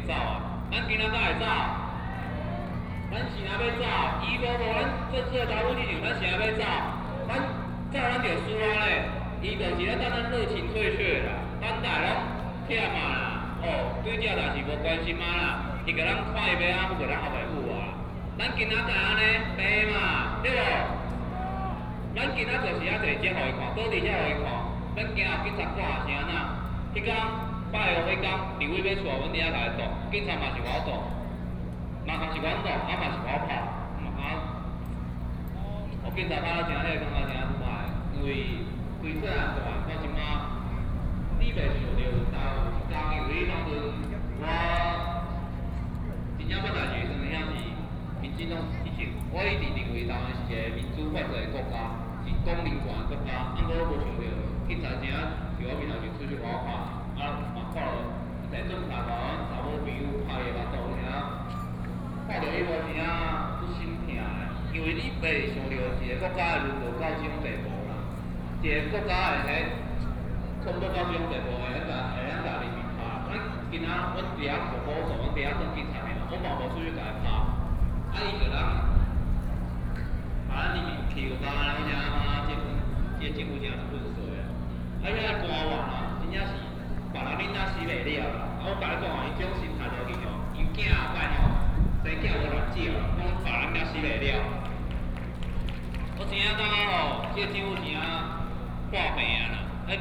中正區黎明里, Taipei City - Occupying major roads
Protest actions are expected to be paralyzed major traffic roads, Opposition to nuclear power, Protest
Taipei City, Taiwan